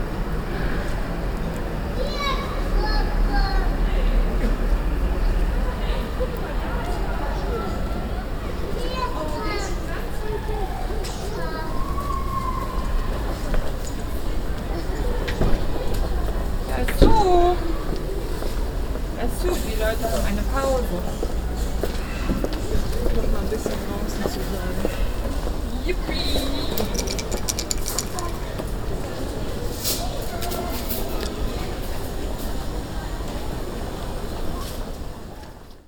Ist zu. Große Bergstraße. 31.10.2009 - Große Bergstraße/Möbelhaus Moorfleet
Gemüseladen-Kräuter Kühne 21
Hamburg, Germany, 31 October 2009, 15:45